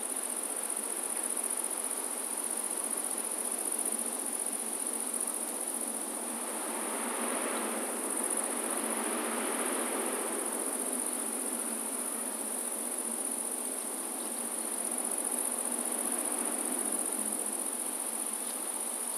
Recordist: Saso Puckovski
Description: In the forest close to some hotels. Insects, birds and people on bikes. Recorded with ZOOM H2N Handy Recorder.
Nida, Lithuania, 26 July 2016